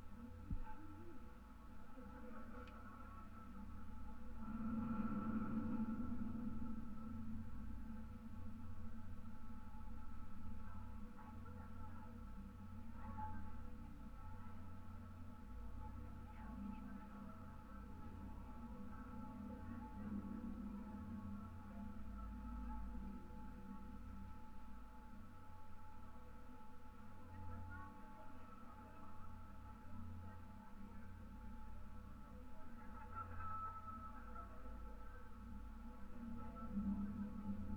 Anyksciai, Lithuania, treetop walking path
massive iron support towers of the treetop walking path. contact microphone recording.
17 October 2015, 11:30